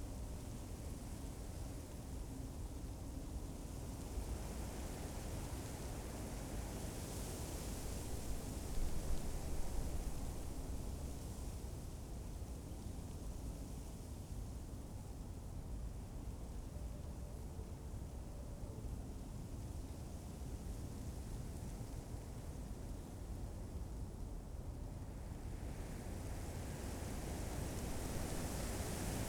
Tempelhofer Feld, Berlin - wind in birch tree
late summer afternoon ambience under a birch tree
(SD702, S502 ORTF)